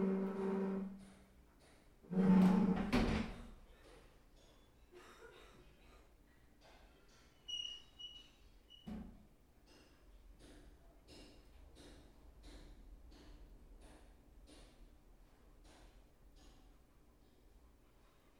R. dos Bancários - Mooca, São Paulo - SP, 03112-070, Brasil - Suburb House
this audio was recorded on a suburb house located on a uncrowded street, the audio intent is build a sound design wich relates a calm house. The audio contains construction tools, washing machine and normal houses sounds.
- Mooca, São Paulo - SP, Brazil